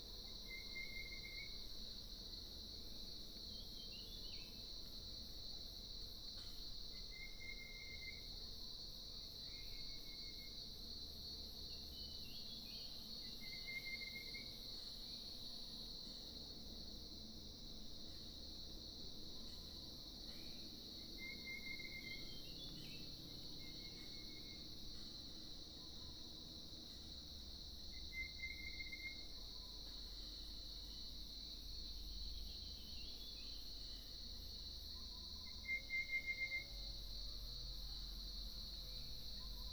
Zhonggua Rd., Puli Township - Bird calls
Early morning, Bird calls